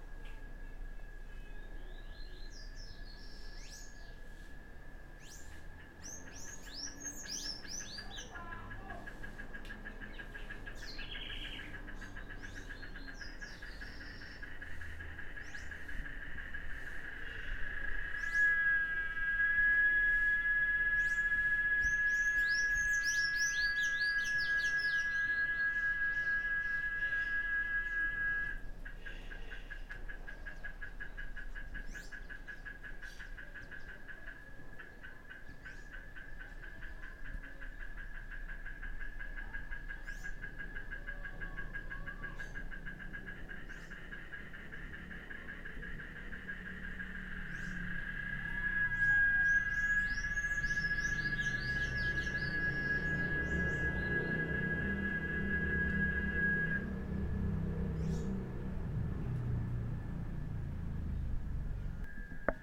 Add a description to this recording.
Esta gravação foi feita as 8 horas da manha, no quintal residencial localizado no centro da cidade de Cruz Das Almas Recôncavo da Bahia. O aparelho realizado para a captação de audio foi um PCM DR 40.